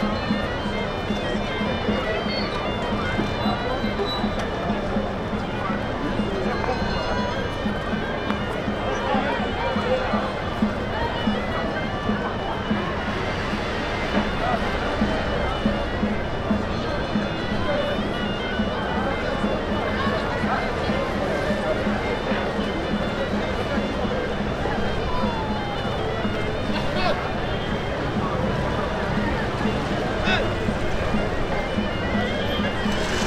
{"title": "Derb Zaari, Marrakech, Marokko - Cafe de France", "date": "2018-11-27 12:04:00", "description": "Recorded from the terrace of the Cafe de France, high above the Jemaa el Fna, the sounds of the square are almost pleasantly relaxing.\nRecorded with Sony PCM-D100 with built-in microphones", "latitude": "31.63", "longitude": "-7.99", "altitude": "469", "timezone": "Africa/Casablanca"}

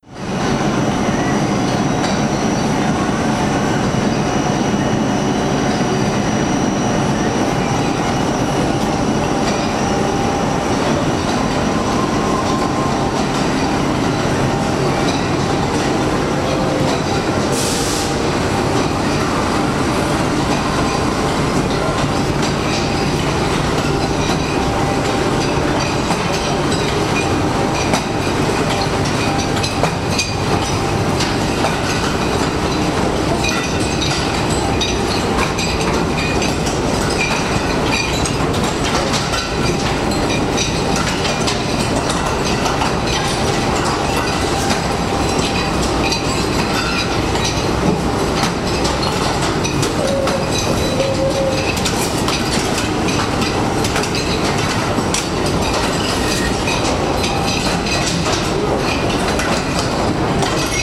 {"title": "Briqueterie Le Croc, Les Rairies, France - Briqueterie Le Croc - Brick & Ceramic factory", "date": "2014-11-28 09:36:00", "description": "Various noises within the brick factory. The tinkling sound is caused by broken bricks falling off a conveyer belt.", "latitude": "47.65", "longitude": "-0.20", "altitude": "34", "timezone": "Europe/Paris"}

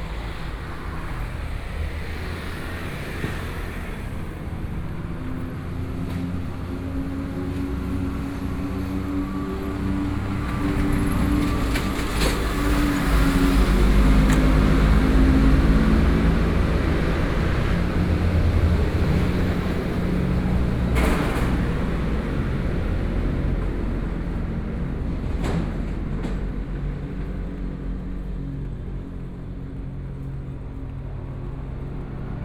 {"title": "Jianzhong St.Miaoli County - Underpass", "date": "2013-10-08 09:21:00", "description": "Walking in the underpass, Traffic Noise, Zoom H4n+ Soundman OKM II", "latitude": "24.57", "longitude": "120.82", "altitude": "48", "timezone": "Asia/Taipei"}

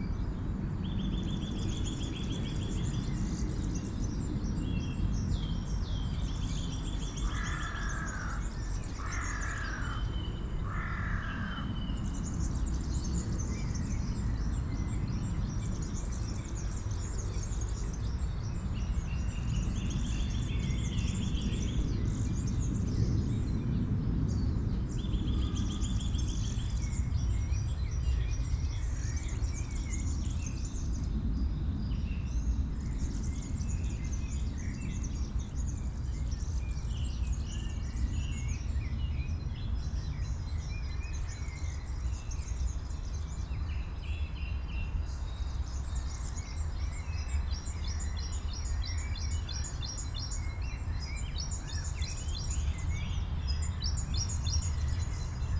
{"title": "Friedhof Columbiadamm, Berlin, Deutschland - cemetery, spring ambience", "date": "2019-03-23 11:15:00", "description": "Cemetery Friedhof Columbiadamm, nearby Sehitlik mosque, park ambience in early spring, some birds: tits, woodpecker, various finches, crows, pidgeon, eurasian nuthatch etc., parks, gardens, waste lands, cemeteries have become important places for biodiversity\n(SD702, DPA4060)", "latitude": "52.48", "longitude": "13.41", "altitude": "48", "timezone": "Europe/Berlin"}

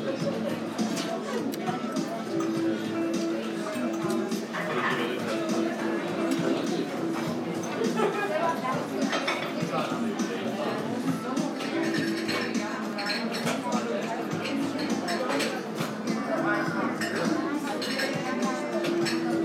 {"title": "Tehran Province, Tehran, Aref Nasab St, No., Iran - Cinema Cafe", "date": "2017-03-17 20:56:00", "description": "Indoor ambience of a cafe in north of Tehran", "latitude": "35.80", "longitude": "51.42", "altitude": "1611", "timezone": "Asia/Tehran"}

